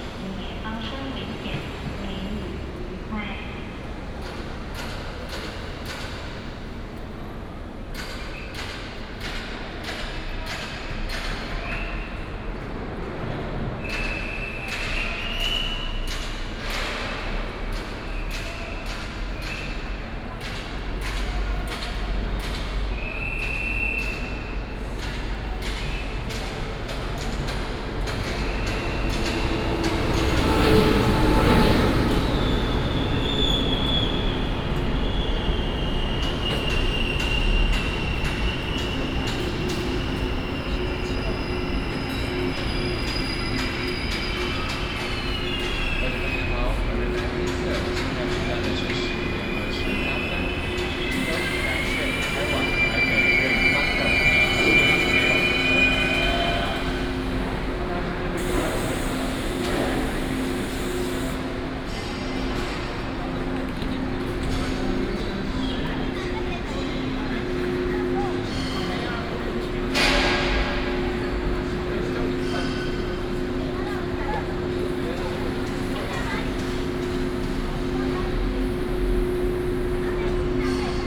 臺中火車站, Taichung City - In the station platform
In the station platform, Traffic sound, Construction sound
Taichung City, Taiwan